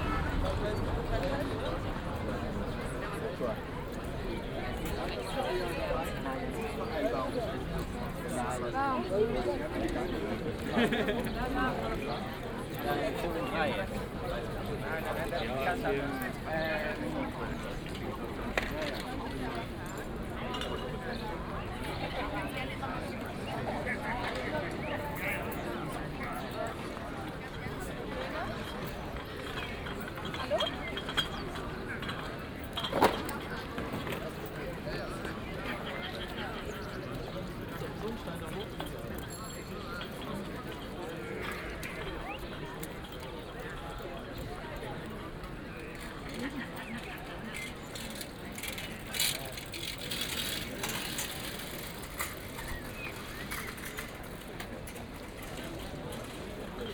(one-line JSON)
{"title": "admiralstraße: admiralbrücke - weekend evening soundwalk Admiralsbrücke", "date": "2010-06-05 20:55:00", "description": "given nice weather, this place is pretty crowded by all sort of people hanging around until late night. famous pizza casolare is just around the corner.", "latitude": "52.50", "longitude": "13.42", "altitude": "37", "timezone": "Europe/Berlin"}